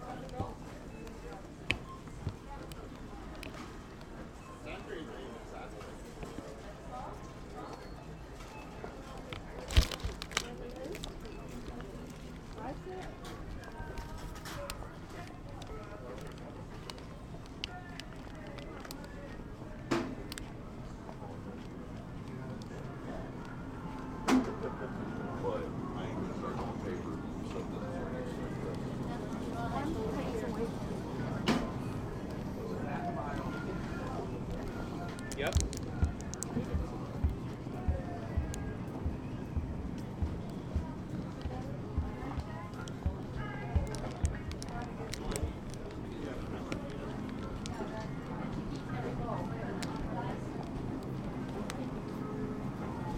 King Soopers, and Arapahoe - Grocs
CO, USA